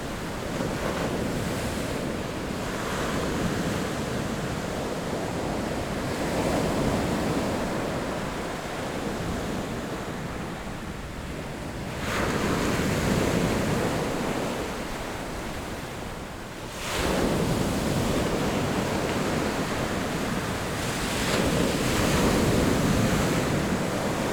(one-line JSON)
{"title": "Daren Township, Taitung County - Sound of the waves", "date": "2014-09-05 14:18:00", "description": "Sound of the waves, Circular stone coast\nZoom H6 XY + Rode NT4", "latitude": "22.29", "longitude": "120.89", "altitude": "1", "timezone": "Asia/Taipei"}